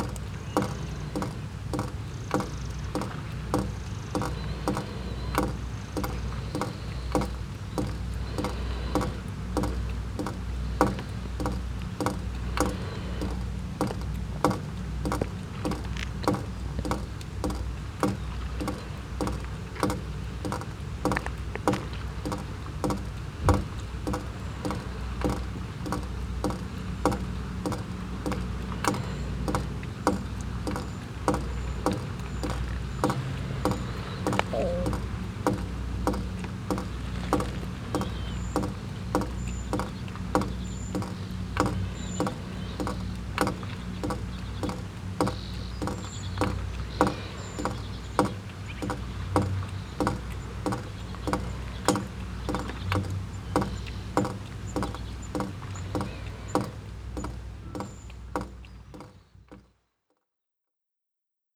Sveio, Norwegen - Norway, Kjekse, cottage, down spout
At a wooden cottage in the small bay Kjekse. The sound of water dripping down the down spout of the house.
international sound scapes - topographic field recordings and social ambiences